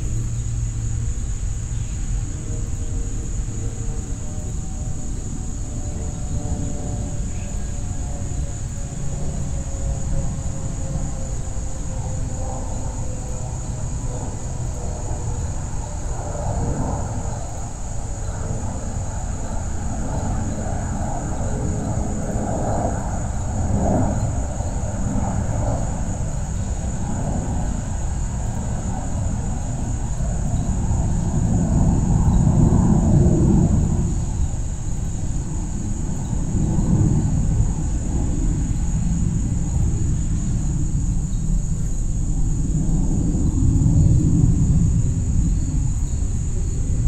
Paulding Ave, Northvale, NJ, USA - Neighborhood Ambience
This is a recording of the general ambience surrounding the neighborhood, as captured from a house on Paulding Avenue. Insects are heard throughout the recording, along with the occasional car, planes passing overhead, and the droning of a leaf blower in the background.
[Tascam Dr-100mkiii w/ Primo EM-272 omni mics]